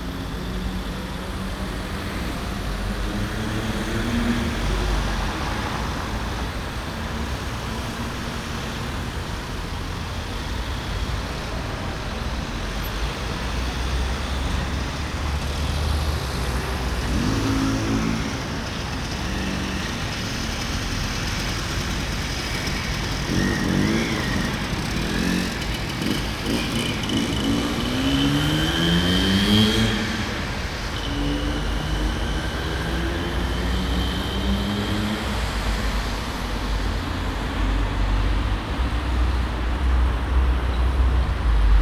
Den Haag, Kneuterdijk, Den Haag, Nederland - Kneuterdijk
Binaural recording.
General atmosphere on the Kneuterdijk in The Hagues.